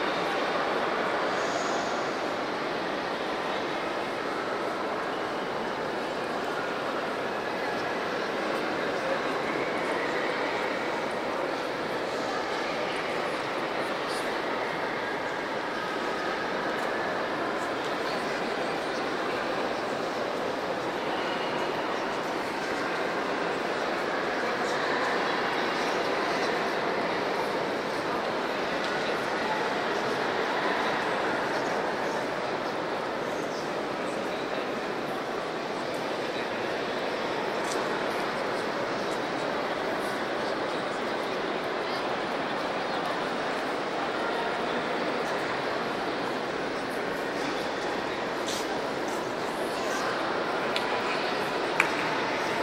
hagia sophia, istanbul - Istanbul, hagia sophia
inside the church, may 2003. - project: "hasenbrot - a private sound diary"